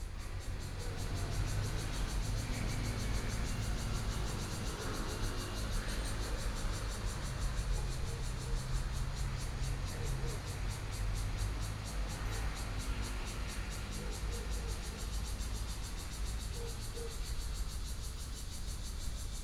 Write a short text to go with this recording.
Birdsong, Cicadas sound, Traffic Sound, Dogs barking, The weather is very hot, Fighter flying through, Binaural recordings